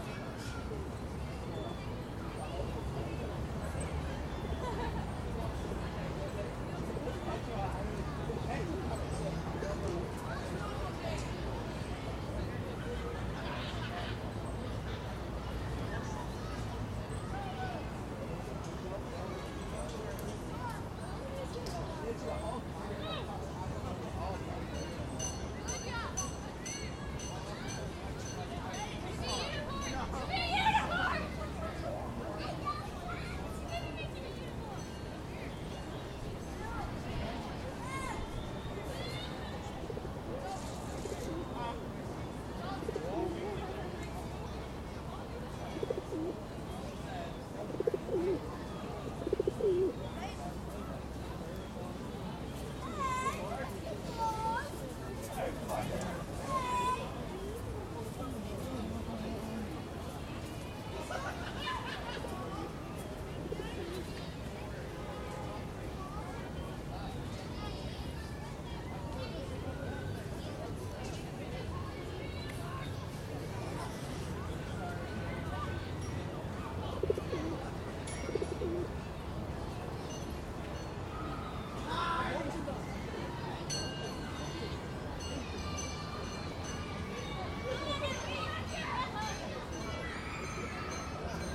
Saint Stephens Green, Dublin, Co. Dublin, Ireland - Summer Afternoon, City Park, Central Dublin
City park - rare sunny afternoon in Dublin - people in droves sitting about on the grass.